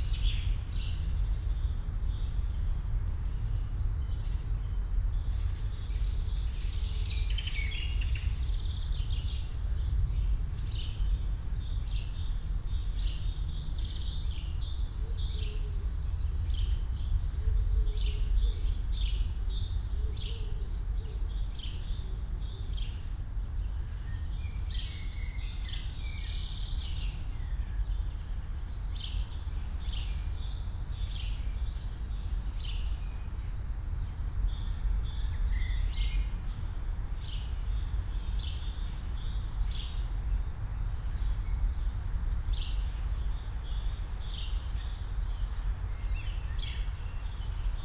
Friedhof Columbiadamm, Berlin - cemetery, spring ambience
Berlin, Alter Garnisonsfriedhof, cemetery ambience in early spring, birds, deep drone of near and distant traffic, cars, aircrafts, trains
(SD702, DPA4060)